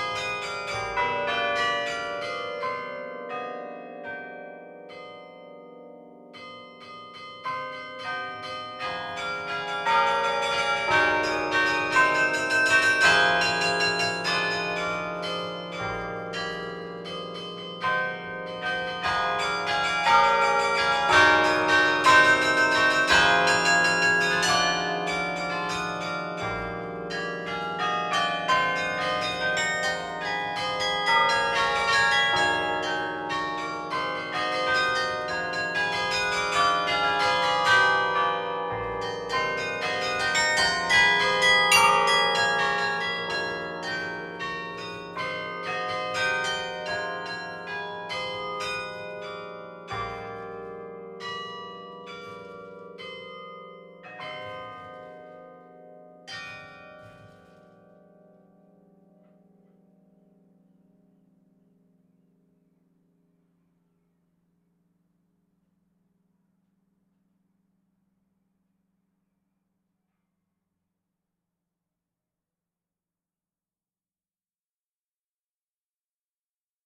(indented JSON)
{
  "title": "Carillon de l'abbatiale de St-Amand-les-Eaux - Abbatiale de St-Amand-les-Eaux",
  "date": "2020-06-10 14:00:00",
  "description": "Abbatiale de St-Amand-les-Eaux\nMaître carillonneur : Charles Dairay",
  "latitude": "50.45",
  "longitude": "3.43",
  "altitude": "19",
  "timezone": "Europe/Paris"
}